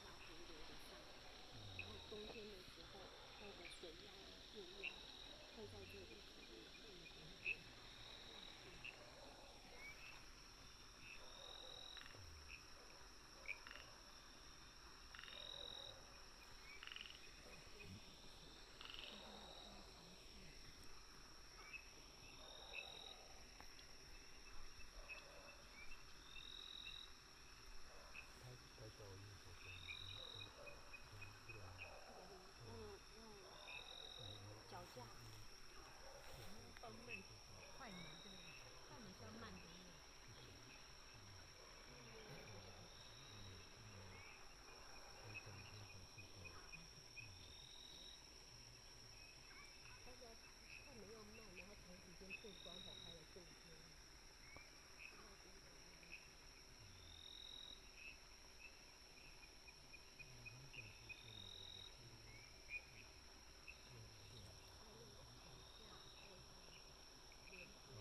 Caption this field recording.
Dogs barking, Frogs chirping, Firefly habitat area